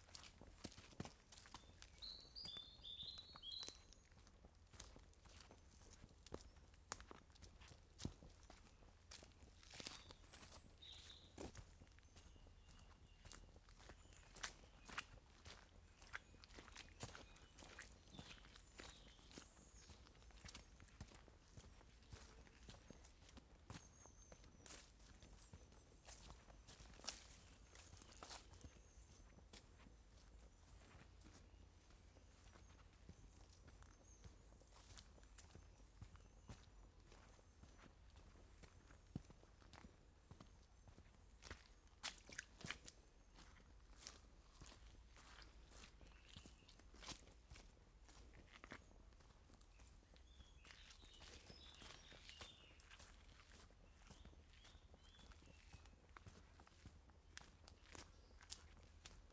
Camborne, Cornwall, UK - A Walk Down A Footpath
I took a walk in the Pendarves Woods and decided to record part of my journey. I used DPA4060 microphones and a Tascam DR100.